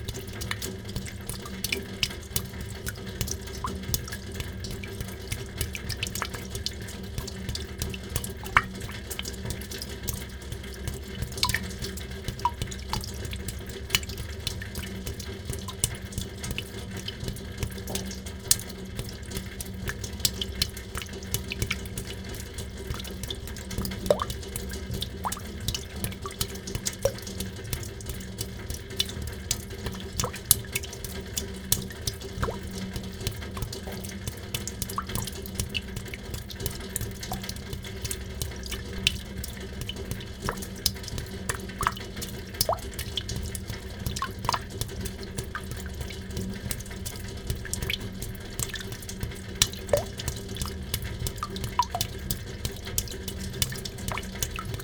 Muzej norosti, Museum des Wahnsinns, courtyard, Trate, Slovenia - rain, inside of the temporary fontain